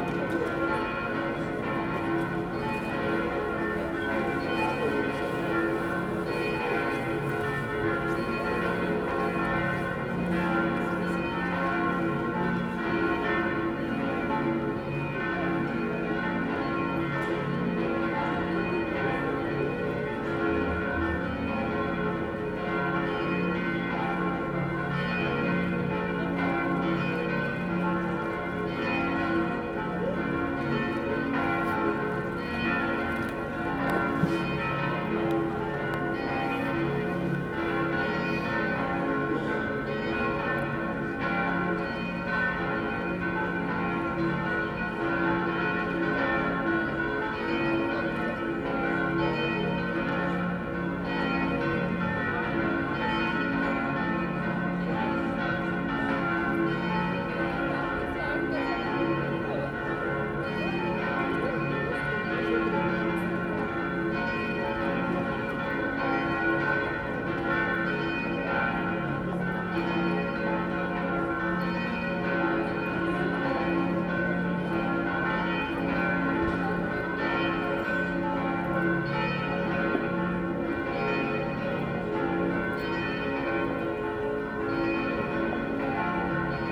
Combination of Bells at Ungelt square, Sunday 12 pm
Praha-Praha, Czech Republic, 12 April